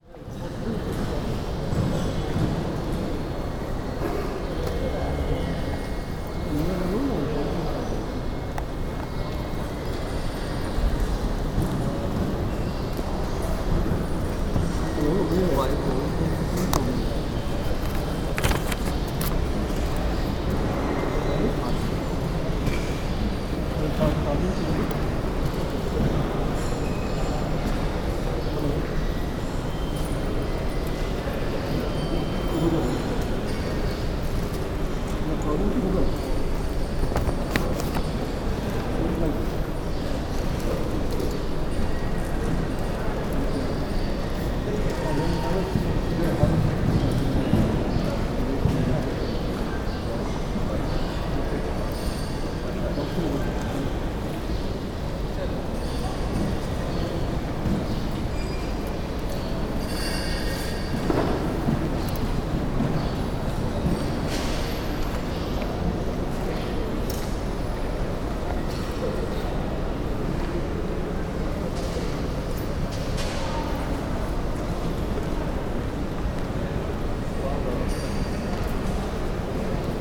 bangalor, karnataka, airport, custom hall
waiting in a row of passengers for passport and custom contraol at bangalor airport. a huge wide and high hall filled with voices of the traveller and the chirps of a group of small local birds
international ambiences and topographic field recordings